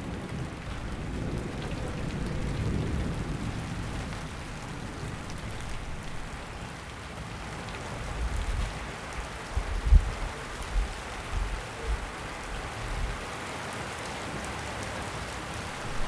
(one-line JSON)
{"title": "Rue de Vars, Chindrieux, France - Orage chaotique", "date": "2022-06-30 18:05:00", "description": "Orage très irrégulier avec pluie imprévisible, les coups de tonnerre se déroulent sans grondements prolongés, captation depuis une fenêtre du premier étage.", "latitude": "45.82", "longitude": "5.85", "altitude": "280", "timezone": "Europe/Paris"}